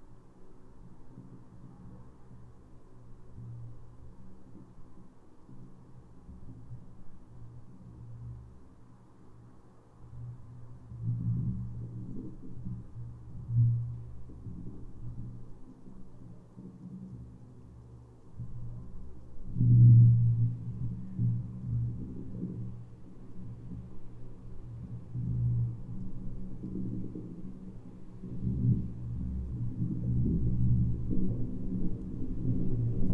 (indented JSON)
{
  "title": "Galeliai, Lithuania, resonances in metallic pipe",
  "date": "2019-11-16 13:05:00",
  "description": "slagbaum made from long metallic pipe. small microphones places inside. mild wind.",
  "latitude": "55.56",
  "longitude": "25.53",
  "altitude": "98",
  "timezone": "Europe/Vilnius"
}